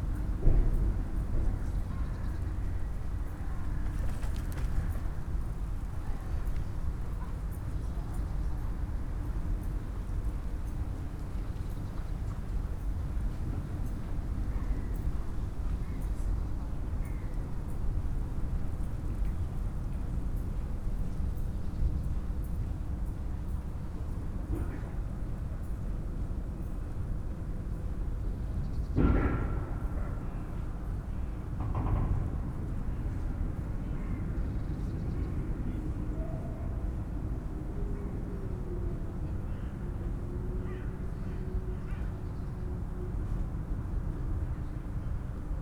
Plänterwalk, river bank, opposite of cement factory and heating plant Klingenberg. place revisited, cold winter afternoon, sounds from the power station.
(Sony PCM D50, DPA4060)
26 January 2014, 15:20